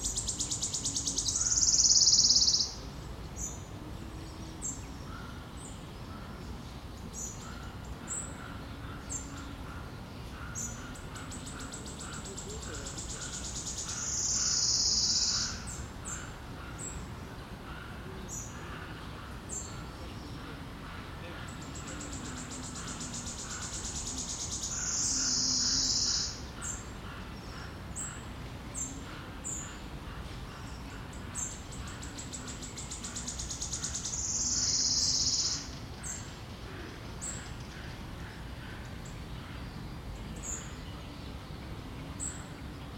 Королёв, Московская обл., Россия - Bird trills
There are bird trills in the forest in this nice and warm sunny day. Human voices, dog barking and the voices of other birds are also heard sometimes.
Recorded with Zoom H2n, 2ch surround mode.
Московская область, Центральный федеральный округ, Россия, 25 May, ~14:00